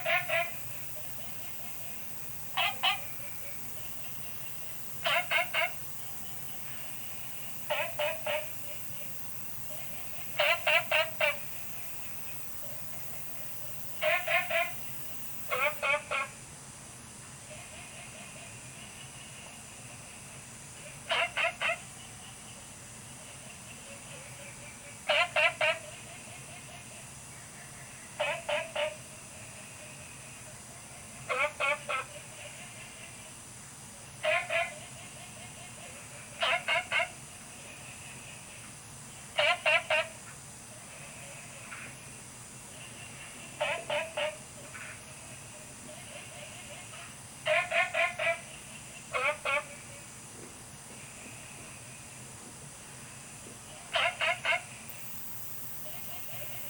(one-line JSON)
{"title": "青蛙ㄚ 婆的家, Puli Township - Frogs chirping", "date": "2015-08-10 23:40:00", "description": "Frogs chirping, Insects sounds\nZoom H2n MS+ XY", "latitude": "23.94", "longitude": "120.94", "altitude": "463", "timezone": "Asia/Taipei"}